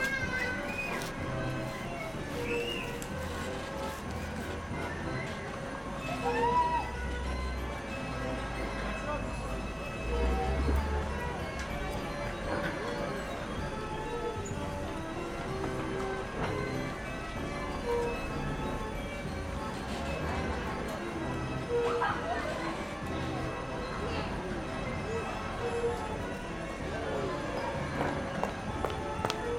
Place Gutenberg, Strasbourg, Frankreich - carousel
the old children's carousel with music and passers-by, parents and their children, ringing when the ride starts and ambient noise
zoom h6
France métropolitaine, France, 12 October